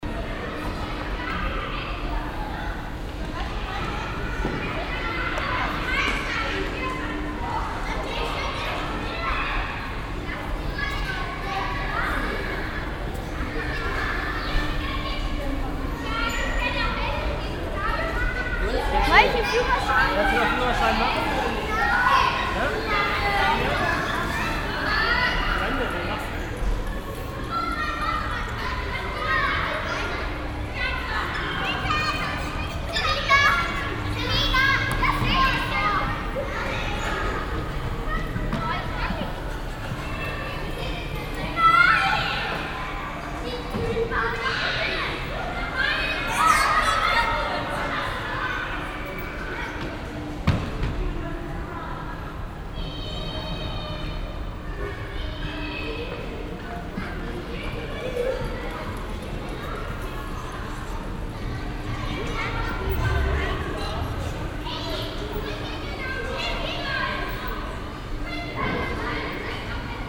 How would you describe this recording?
nachmittagsbetrieb, kinder auf tretfahrzeugen, elternrufe, soundmap: topographic field recordings and social ambiences